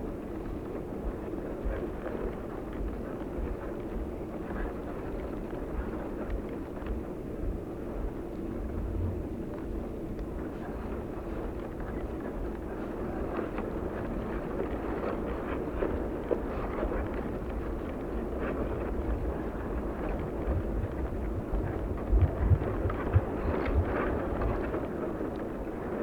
Jūrmala, Latvia, in the sand
hydrophones buried in the dunes' sand, near the roots of the grass
22 August, 19:40